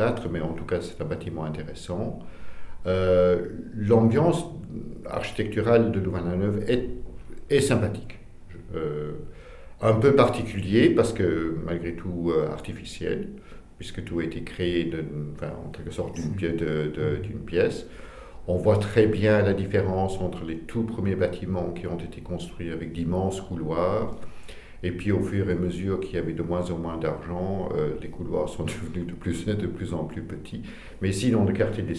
Ottignies-Louvain-la-Neuve, Belgique - David Phillips
David Phillips is working in Louvain-La-Neuve since 23 years. He gives his view on the city evolution. His look is very interesting as he's involved in architecture. This is a rare testimony.